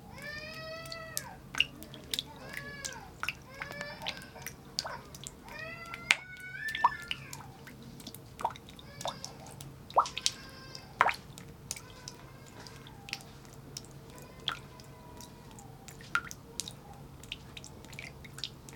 Gouttes d'eau dans la grotte, des enfants discutent autour.
Tech Note : Sony PCM-M10 internal microphones.